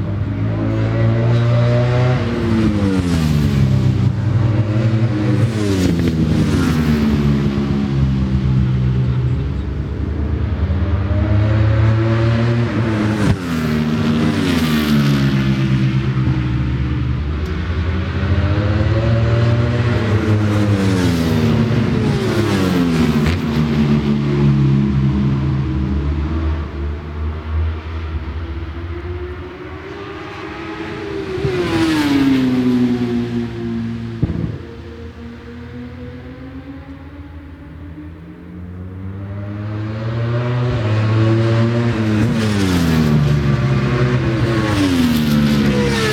Brands Hatch GP Circuit, West Kingsdown, Longfield, UK - WSB 2003 ... superbike qualifying ...
world superbikes 2003 ... superbike qualifying ... one point stereo mic to minidisk ... time approx ...